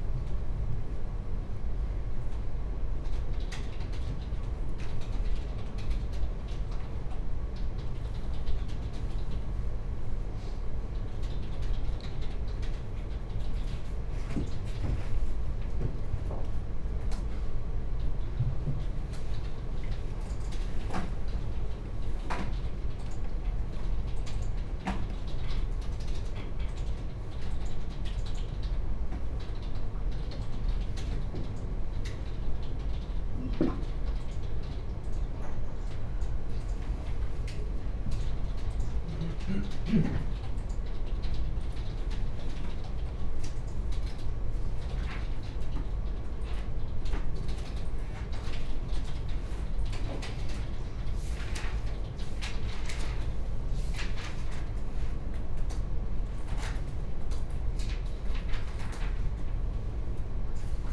Oxford Brookes University, Gypsy Lane, Oxford - Brookes Library Quiet Space
Short 10-minute meditation in the 'Silent Space' of the library at Oxford Brookes University (spaced pair of Sennheiser 8020s with SD MixPre6).
Oxford, UK, 4 February 2019, ~15:00